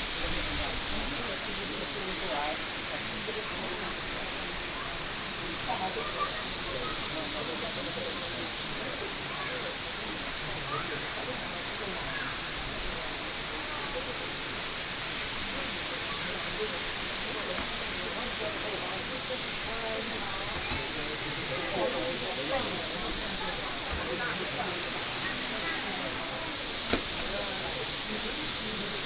五峰旗瀑布, Jiaoxi Township, Yilan County - waterfall
waterfall, Tourists
Binaural recordings
Sony PCM D100+ Soundman OKM II
18 November, ~11:00